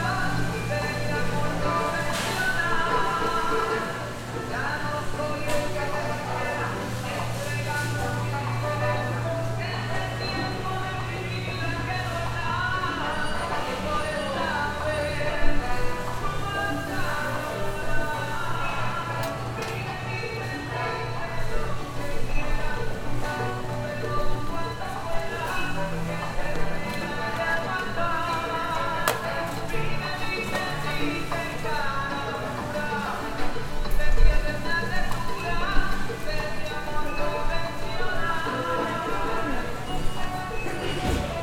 Av. 33 #83a-2 a, Medellín, Antioquia, Colombia - Ambiente Tienda La Vaquita
Ambiente grabado en tiendas la vaquita de la castellana.
Sonido tónico: música, voces
Señal sonora: paquetes, pasos, bolsas, viento, sonido de máquina lectora de precios.
Equipo: Luis Miguel Cartagena Blandón, María Alejandra Flórez Espinosa, Maria Alejandra Giraldo Pareja, Santiago Madera Villegas, Mariantonia Mejía Restrepo.